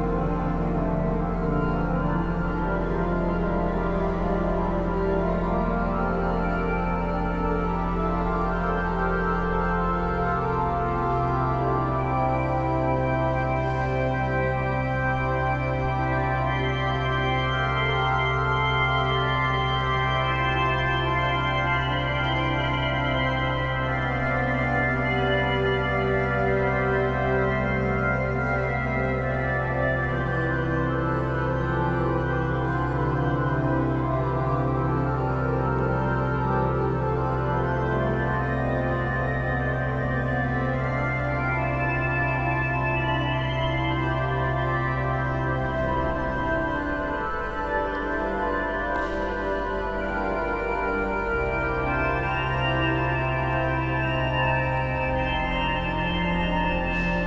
The “In cornu Epistolae organ” played to announce the beginning of the mass at Santa Maria della Passione, in Milan. The organ's doors, painted by Daniele Crespi, are open. The church is still empty.